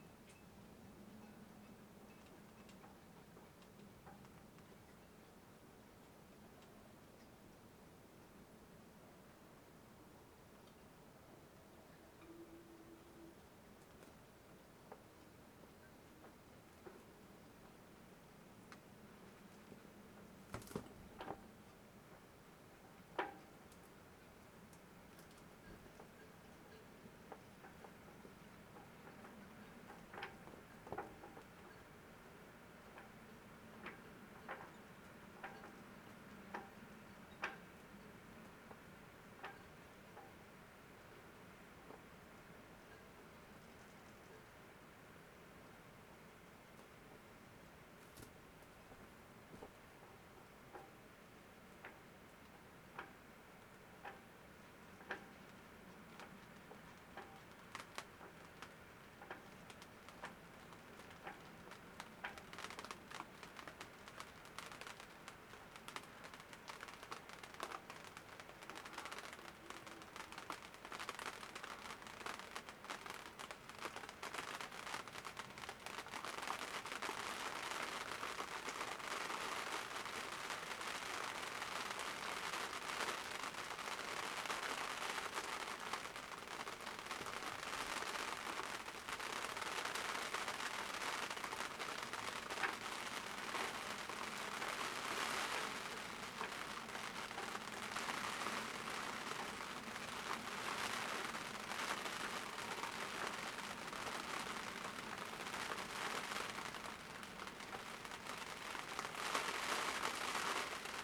short thunderstorm with heavy rain
the city, the country & me: july 1, 2011
Workum, The Netherlands, 1 July